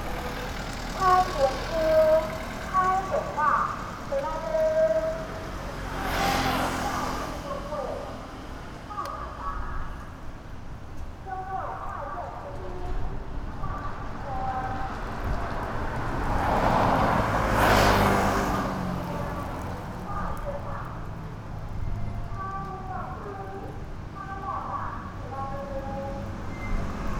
Nanzih District, Kaohsiung - Broadcasting
Broadcasting, Sony PCM D50